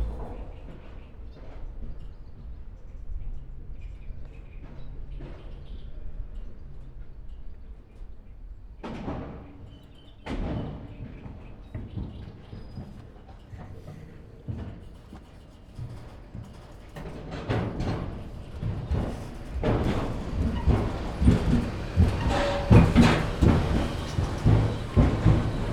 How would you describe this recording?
samochodzy przejeżdzają mostem, warsztaty z Jackiem Szczepankiem